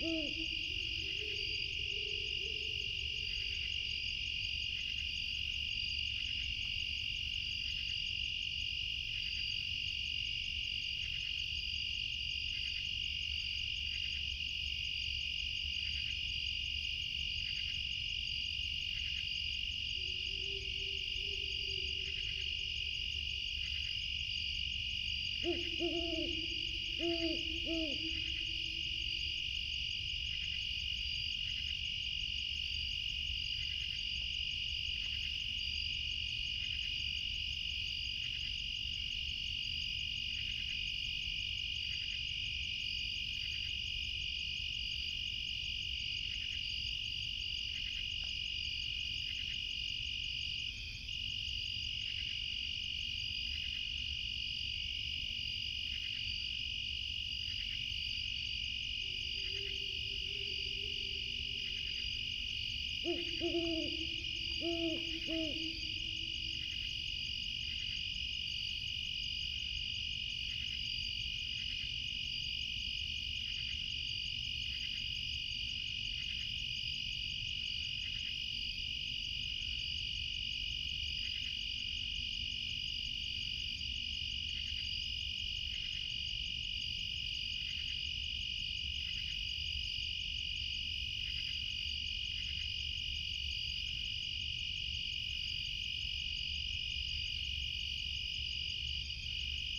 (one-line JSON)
{"title": "Edward G Bevan Fish and Wildlife Management Area, Millville, NJ, USA - owls and insects", "date": "2008-07-14 00:15:00", "description": "great horned owls, insect drone\nfostex fr2le, at3032 omni", "latitude": "39.33", "longitude": "-75.07", "altitude": "26", "timezone": "America/New_York"}